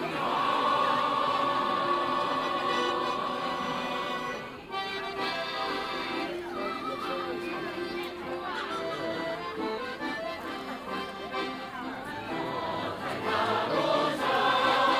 beijing, temple of heaven, abendgesänge
recorded in nov 07, in the early evening - on the way to the main temple, different ensembles of amateur musicians performing for themselves and passing visitors. some groups sing in chorus acoustic, other perform with battery amplified karaoke systems - footwalk no cut
international city scapes - social ambiences and topographic field recordings